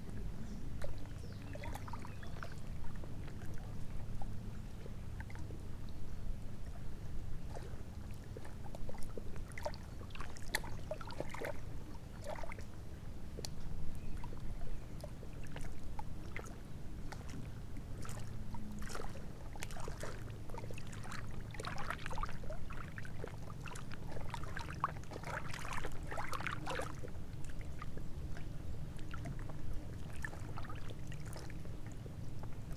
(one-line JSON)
{"title": "Lithuania, Sudeikiai, at the lake", "date": "2013-05-19 13:50:00", "latitude": "55.62", "longitude": "25.68", "altitude": "141", "timezone": "Europe/Vilnius"}